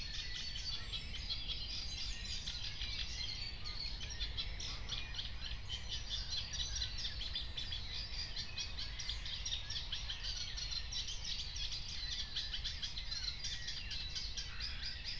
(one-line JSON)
{"date": "2021-05-16 04:50:00", "description": "04:50 Berlin, Buch, Mittelbruch / Torfstich 1 - pond, wetland ambience", "latitude": "52.65", "longitude": "13.50", "altitude": "57", "timezone": "Europe/Berlin"}